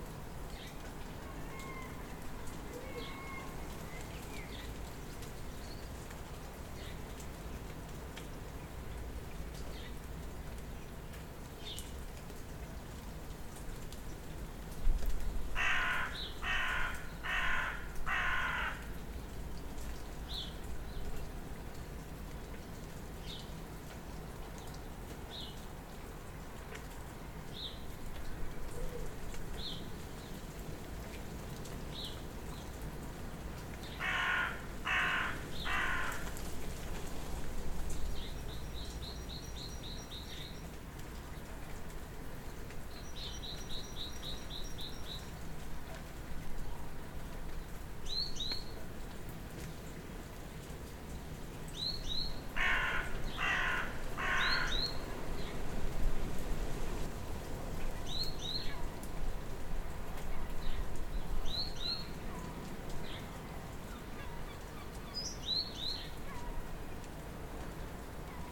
Bridport, Dorset, UK - Dawn Chorus 1
Dawn Chorus on a very wet and windy Sunday morning.
3 May 2015, 05:40